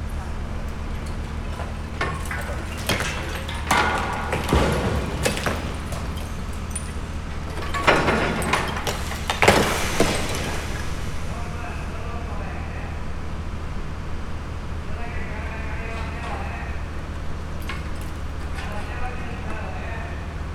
SBG, Plaça Nueva - Obras
Desmontaje de las estructuras de la antigua fábrica, parte del trabajo de acondicionamiento para acometer la construcción de lo que será la nueva plaza del pueblo.